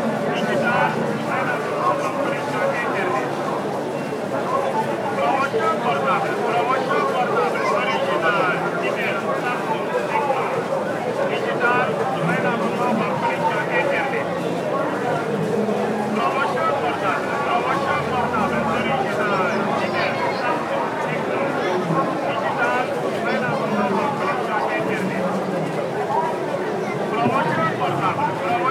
Touba, Senegal - On Illa Touba - Grand Magal
Sounds of people and vehicles on one of the main roads that runs to the Great Mosque of Touba, during the Grand Magal in October 2019. The Illa Touba was almost completely closed to vehicles to accommodate all of the people that visit the city and walk to the Mosque.
Département de Mbacké, Région de Diourbel, Sénégal, 17 October, 12:02